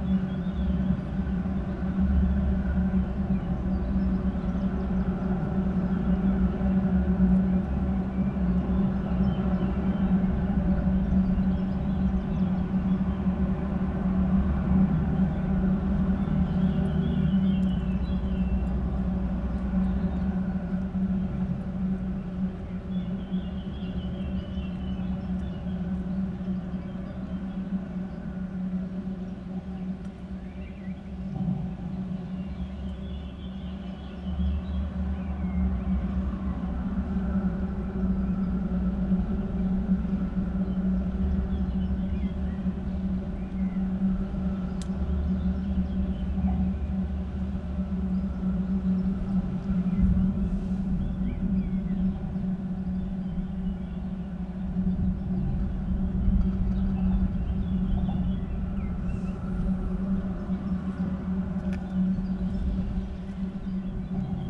a pipe through ones of the concrete supports of the koroški (corinthian) bridge, presumably there to diminish wind resistance.
Slovenia, 16 June 2012, ~15:00